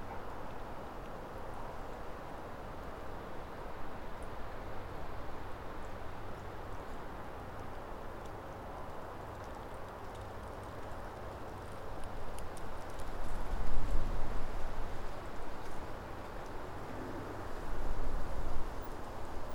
Woodcote, UK - Greenmore Ponds 10.30pm
The wind blowing through the birch woodland, distant train, plane and traffic noise predominate. Less obvious is the constant high pitch popping sound of bubbles on the surface of the pond. The occasional quacking of a duck, creaking of trees in the breeze and train whistle from the mainline down the hill in Goring and Pangbourne can also be heard. A car from Long Toll turns into Greenmore at the end of the recording. Tech notes: spaced pair of Sennheiser 8020s at head height recorded onto SD788T with no post-pro.
Reading, UK, 9 April, 10:30